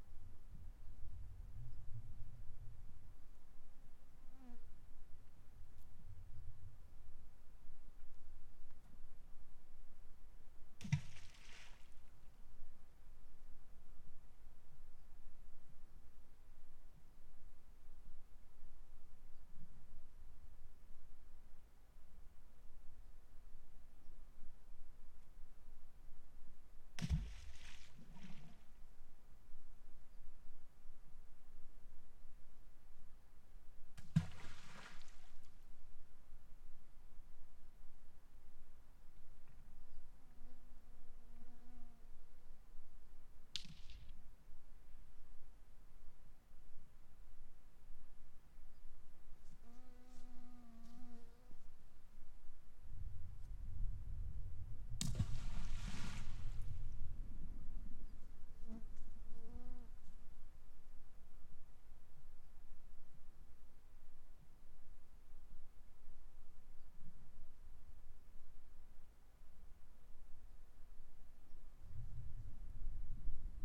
Teriberka, Murmansk district, Sekretarskoe Lake, Russia - Reflections of Rocks Water Voice
Dead quiet place at the Sekretarskoe Lake near the Barents Sea. Insects attack. Unusual acoustic reflections in unusualy silent place.
Recorded with Tereza Mic System - Zoom F6
Северо-Западный федеральный округ, Россия, 7 September 2021, 19:30